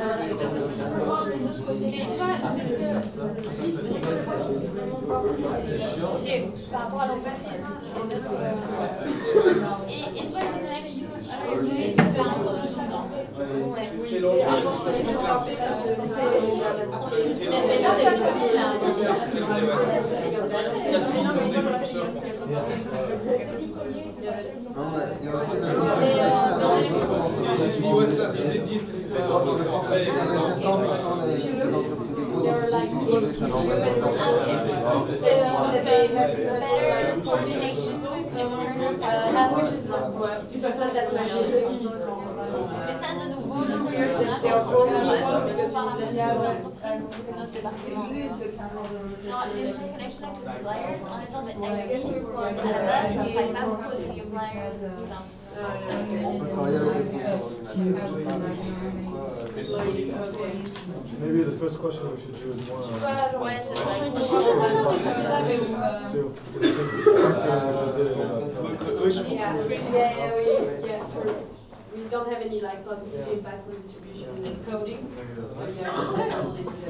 In the Jacques Leclercq classes, a course of english, we are here in a case of practical studies.
Ottignies-Louvain-la-Neuve, Belgium, 11 March 2016, 11:48